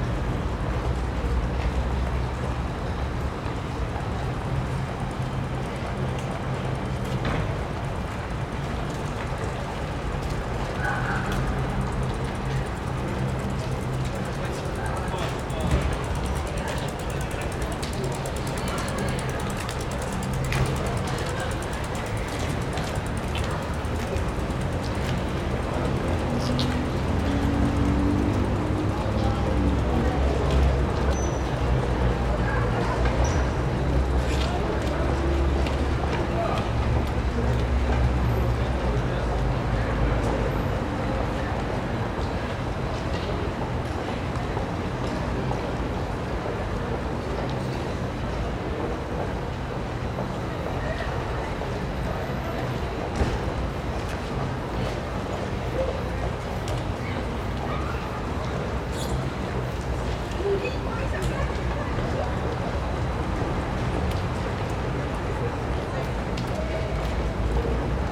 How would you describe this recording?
Town hall square of Tartu Estonia